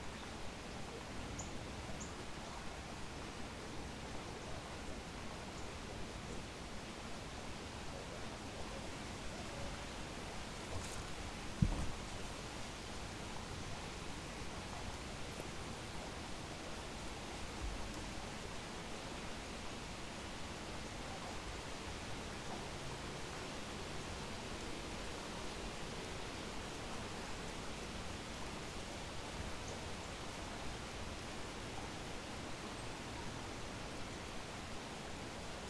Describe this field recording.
Recording made in a park near my house in Toronto.